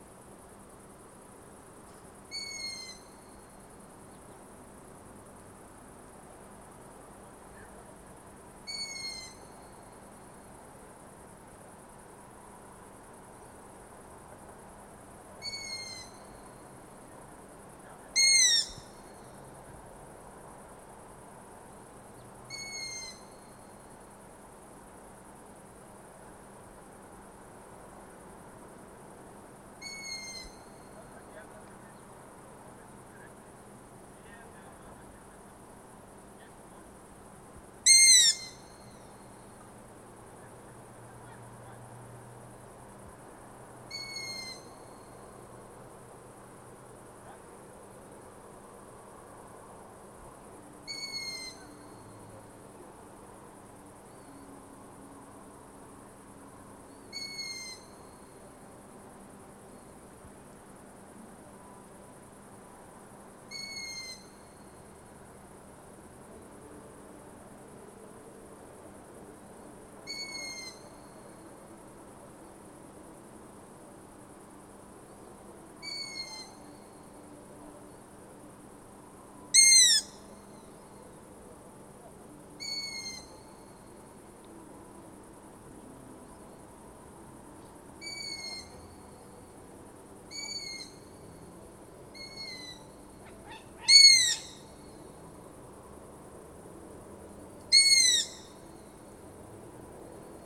{"title": "Utena, Lithuania, Long-eared Owl", "date": "2020-08-08 22:55:00", "description": "Long-eared Owl in town's park.", "latitude": "55.51", "longitude": "25.59", "altitude": "104", "timezone": "Europe/Vilnius"}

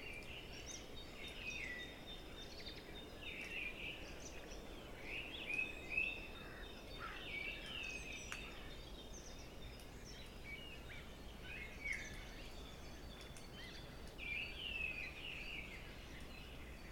{"title": "Village de Chaban, Saint-Léon-sur-Vézère, France - AMB CHABAN MATIN OISEAUX MIX PRE 6 HAUN MBC 603 CARDIO ORTF", "date": "2021-03-12 08:14:00", "description": "Forest near the house. MIX PRE 6 II, Haun MBP with cardio capsules ORTF. Sun after the rain.", "latitude": "45.01", "longitude": "1.07", "altitude": "170", "timezone": "Europe/Paris"}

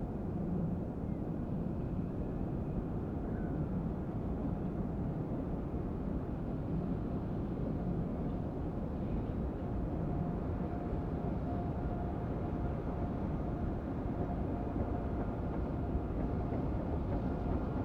{"title": "koeln, mediapark - near track system", "date": "2010-10-10 17:30:00", "description": "mediapark, near tracks, nice wastelands with 1000s of rabbits. soundcape of passing trains", "latitude": "50.95", "longitude": "6.95", "altitude": "54", "timezone": "Europe/Berlin"}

